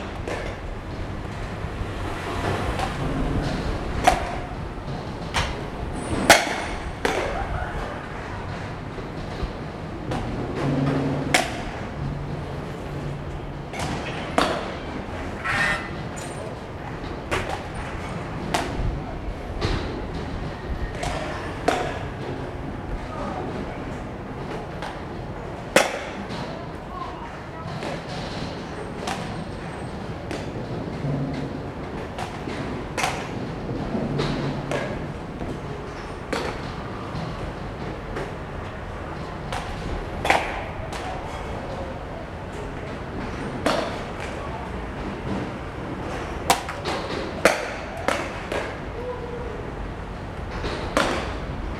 Zuoying District - Batting Cages
Batting Cages, Sony ECM-MS907, Sony Hi-MD MZ-RH1
左營區 (Zuoying), 高雄市 (Kaohsiung City), 中華民國, 25 February, 18:15